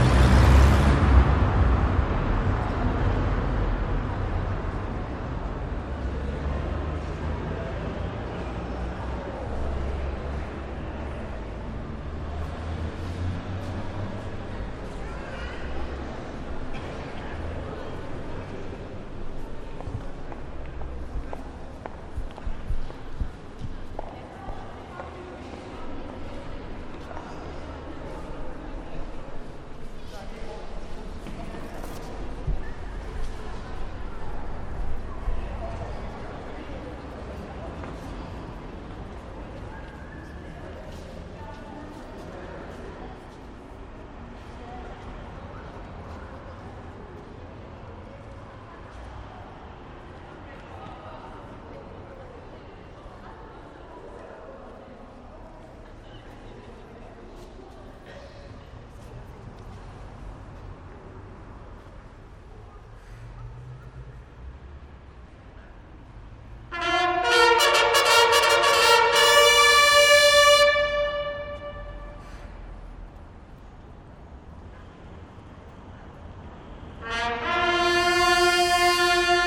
Ieper, België - last post
each day, since 1928 at 20.00 last post is played at the Meenenpoort in Ieper
this recordign is made on an ondinary day
it is very remarkable how fast cars start driving trough the gate again after the last post was played for that day
rememenbrence is for different worlds